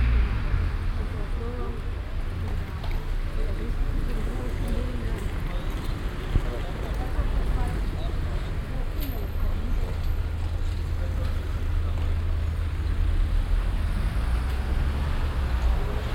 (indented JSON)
{
  "title": "cologne, krefelder strasse, ampelübergang",
  "date": "2008-08-28 08:47:00",
  "description": "ampelübergang am nachmittag, abbiegeverkehr ein sprintender huper\nsoundmap nrw: social ambiences/ listen to the people - in & outdoor nearfield recordings",
  "latitude": "50.95",
  "longitude": "6.95",
  "altitude": "53",
  "timezone": "Europe/Berlin"
}